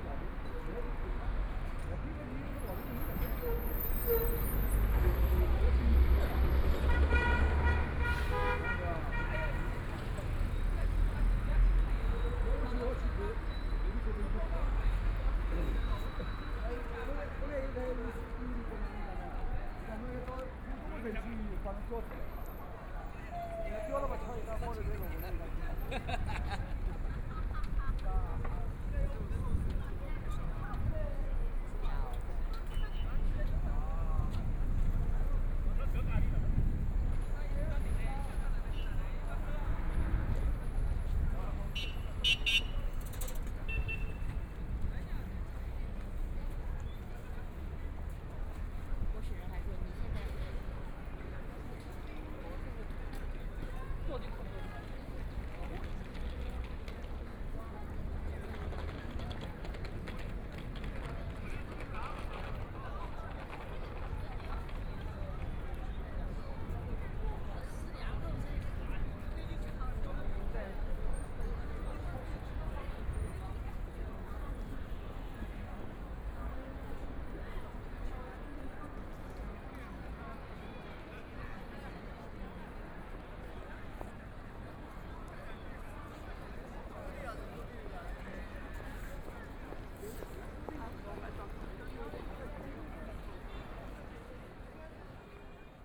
Jiujiang Road, Shanghai - in the corner
Intersection corner, The crowd at the intersection, Traffic Sound, Binaural recordings, Zoom H6+ Soundman OKM II